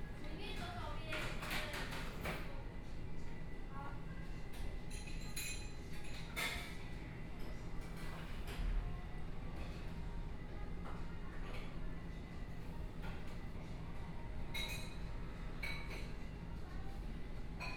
三商巧福民權店, Zhongshan Dist., Taipei City - In the restaurant
In the restaurant, Binaural recordings, Zoom H4n+ Soundman OKM II